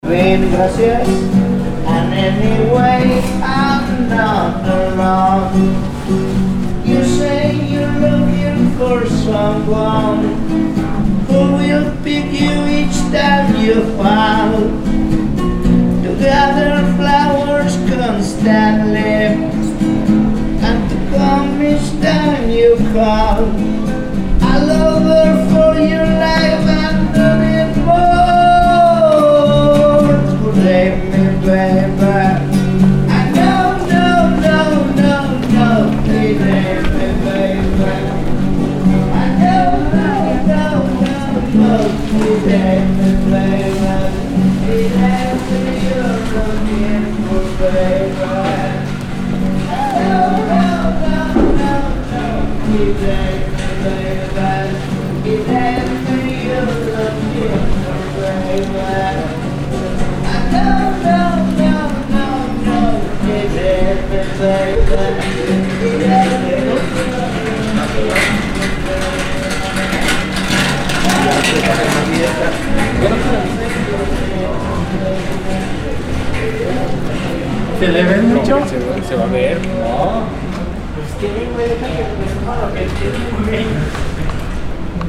Álvaro Obregón, Ciudad de México, D.F., México - músico en pasadizo de metro
Soundscape, street musician, metro.
Federal District, Mexico, 20 September